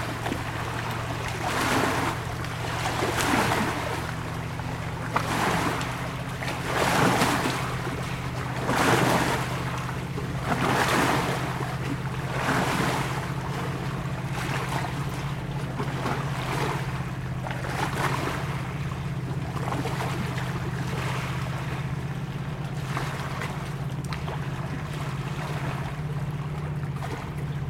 Embouchure du Sierroz, Aix-les-Bains, France - Vagues
Sur les berges du lac dans les rochers, vagues et passages de bateaux sur le lac du Bourget.